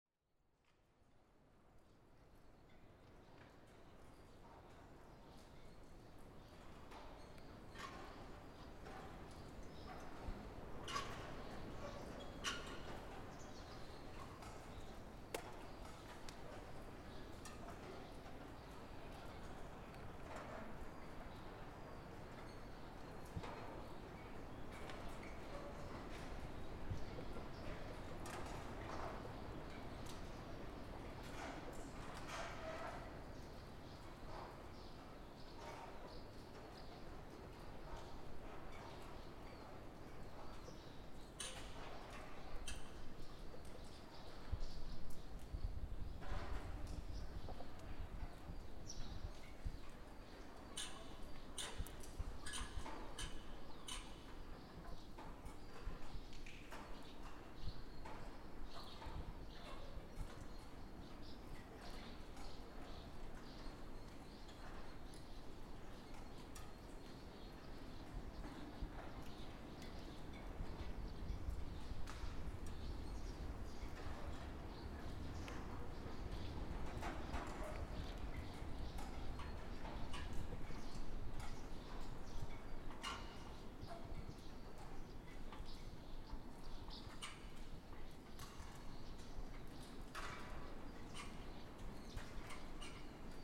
Buzludzha, Bulgaria, inside hall - Buzludzha, Bulgaria, large hall 4
Part four of the concert of remnants of the house of communism
15 July 2019, 12:36